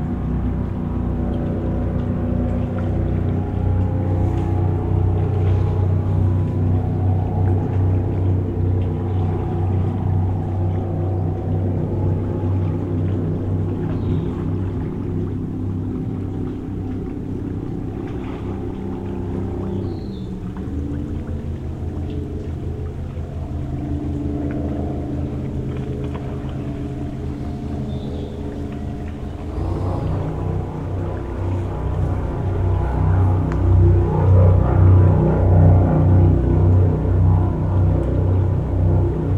Okishimacho, Omihachiman, Shiga Prefecture, Japan - Airplane and Boat at Lake Biwa near Okishima
Small airplane and fishing boat recorded from the shore of Lake Biwa along Shiga Roiute 25 in Okishima-cho, Omihachiman CIty, Shiga Prefecture, Japan. Recorded with an Audio-Technica BP4025 stereo microphone and a Tascam DR-70D recorder.